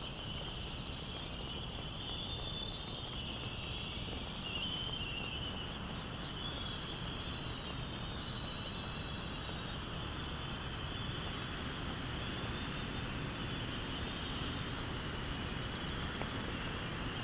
Laysan albatross soundscape ... Sand Island ... Midway Atoll ... laysan albatross calls and bill clappers ... white terns ... canaries ... black noddy ... open lavaliers either side of a fur covered table tennis bat used as a baffle ... background noise ... wind thru iron wood trees ... voices ... doors banging ...